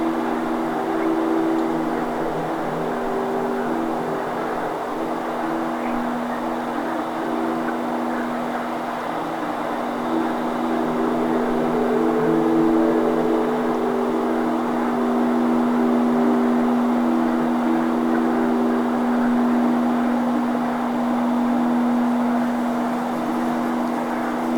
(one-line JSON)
{"title": "Stockbridge, VT, USA - woodfrogs&wind", "description": "through an open window, a cool evening breeze plays a ukulele as a choir of woodfrogs sing along.", "latitude": "43.71", "longitude": "-72.73", "altitude": "476", "timezone": "Europe/Berlin"}